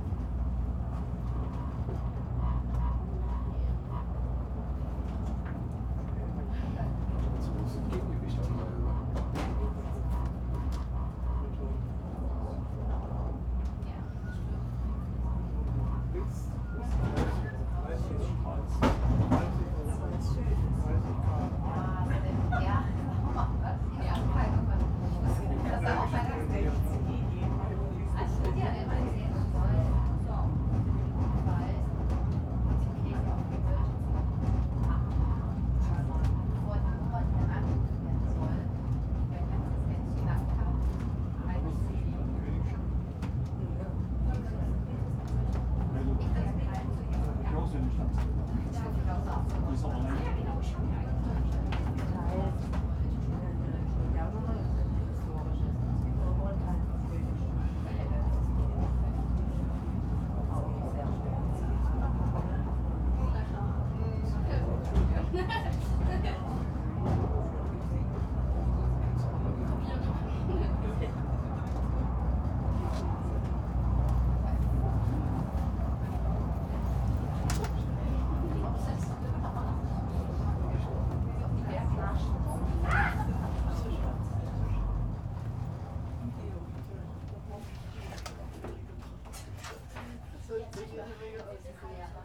Loschwitz, Dresden, Deutschland - ropeway, Standseilbahn
ropeway ride from Dresden Loschwitz to Weisser Hirsch
(Sony PCM D50)